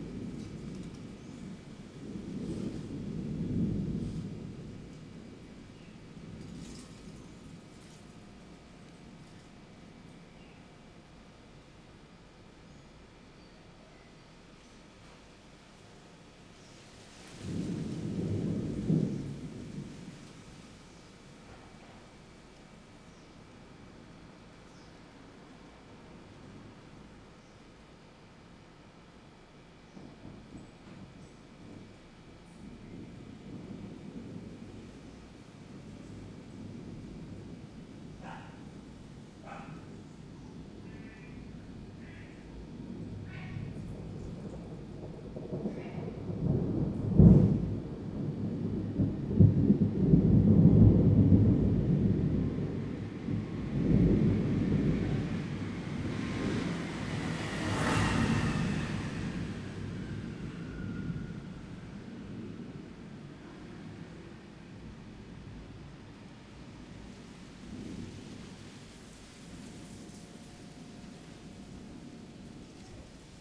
No., Fuqun Street, Xiangshan District, Hsinchu City, Taiwan - August Thunderstorm
A summer thunderstorm moves through the Fuqun Gardens community. Leaves are blown around by wind gusts, and occasional birds and vehicles are heard. Recorded from the front porch. Stereo mics (Audiotalaia-Primo ECM 172), recorded via Olympus LS-10.